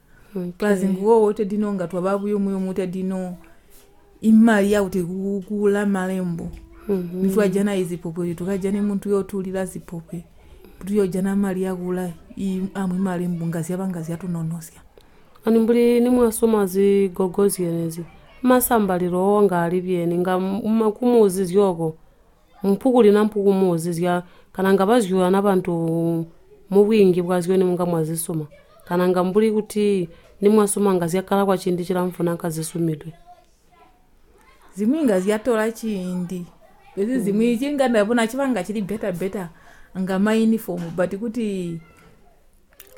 Chinonge, Binga, Zimbabwe - We are sewing school uniforms...
Eunice Mwinde interviews a member of a VSnL group in Chinonge Ward (VSnL = Village Saving and Lending). They speak in the local language, ChiTonga. The woman describes the formation of a group of 6 women in 2014. The group entertains a collective project of sewing uniforms and then also included sewing African attire from fashion fabrics. Eunice asks her to describe how they share their work in the group and the benefits the women got from their business. Eunice enquires about the training they received via Zubo workshops to built up their business. The woman describes.
a recording from the radio project "Women documenting women stories" with Zubo Trust.
Zubo Trust is a women’s organization in Binga Zimbabwe bringing women together for self-empowerment.